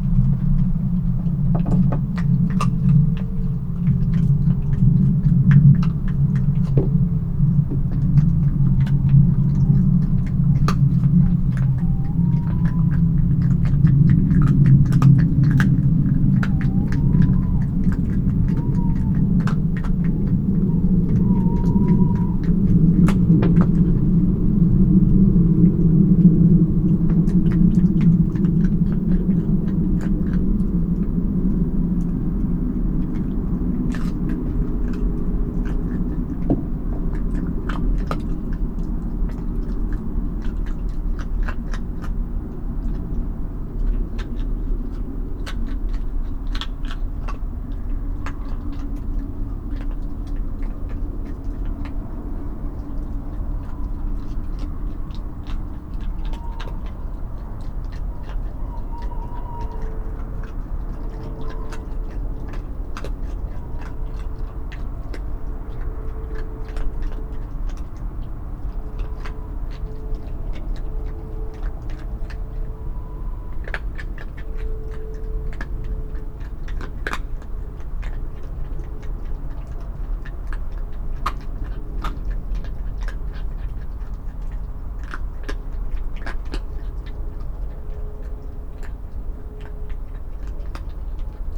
10.14pm on a breezy evening. A fox is munching bones I have put on the wooden deck for him. Owls call and an apple falls hitting the wheelbarrow. A jet flies over.
MixPre 6 II with 2 Sennheiser MKH 8020s